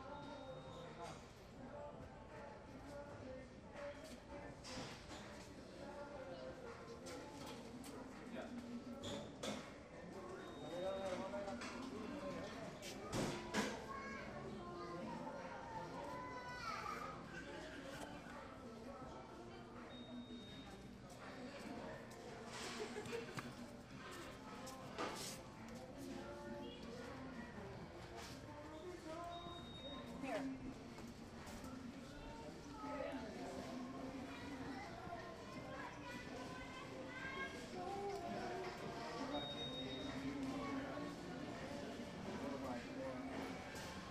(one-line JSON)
{"title": "The Home Depot Emeryville 5", "date": "2010-11-18 03:10:00", "description": "The Home Depot Emeryville", "latitude": "37.83", "longitude": "-122.28", "altitude": "8", "timezone": "US/Pacific"}